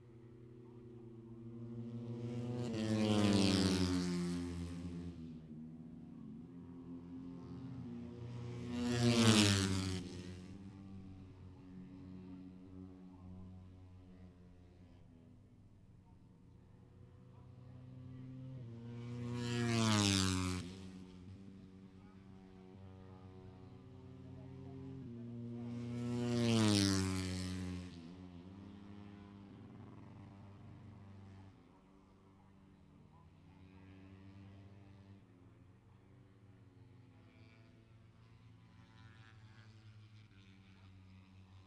Silverstone Circuit, Towcester, UK - british motorcycle grand prix 2019 ... moto three ... fp2 ...
british motorcycle grand prix 2019 ... moto three ... free practice two ... maggotts ... lavalier mics clipped to bag ...